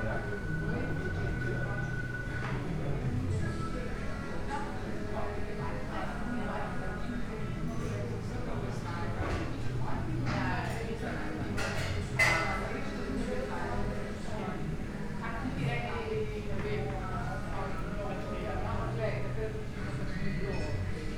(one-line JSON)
{"title": "wolfova ulica, ljubljana - sushibar, late lunch", "date": "2014-01-10 15:47:00", "latitude": "46.05", "longitude": "14.51", "altitude": "295", "timezone": "Europe/Ljubljana"}